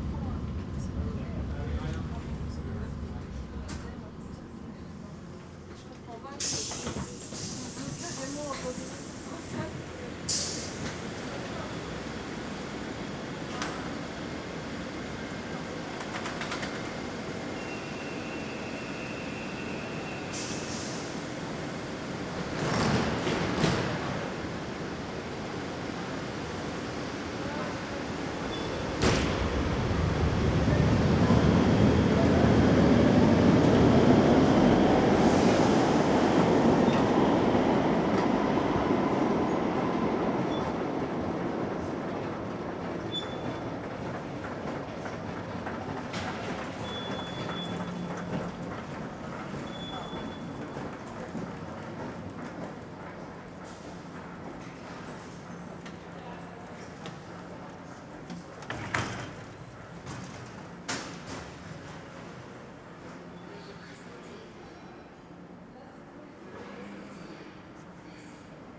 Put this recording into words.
Then going out at Louise. Tech Note : Ambeo Smart Headset binaural → iPhone, listen with headphones.